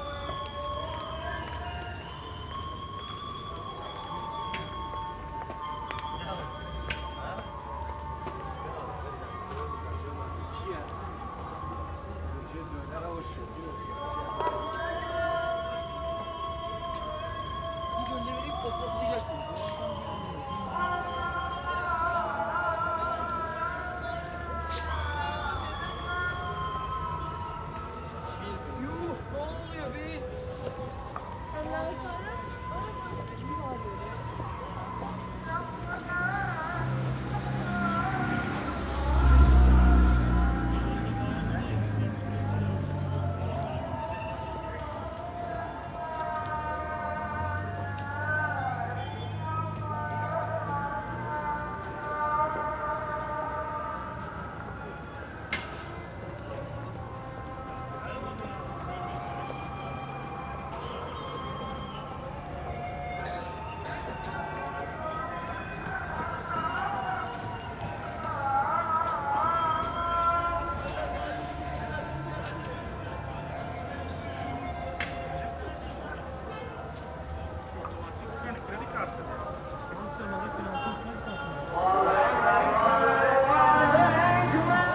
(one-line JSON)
{"title": "Multiple Adhan calls in the golden horn", "date": "2010-02-10 15:12:00", "description": "I stopped to record a noisy flute sound when suddenly many Adhan calls broke out", "latitude": "41.01", "longitude": "28.97", "altitude": "60", "timezone": "Europe/Tallinn"}